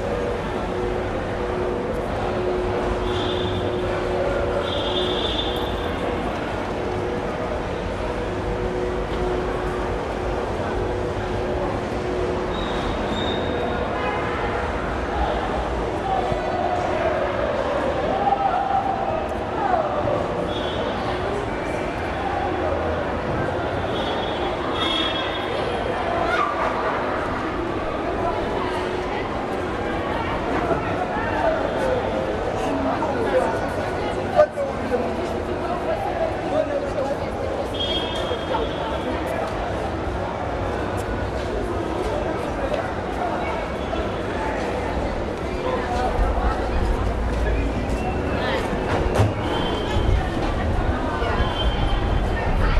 Bree Street Taxi Rank, Newtown, Johannesburg, South Africa - Everyone's broadcasting...
A stroll through Bree street taxi rank… I often travel from here… this day, I came for listening… everyone’s “broadcasting” here… I drift across the ground floor level … between parking combies, waiting and lingering people … along the small stalls of the traders… then half a floor up through the “arcade” along the market stalls…
(mini-disk recording)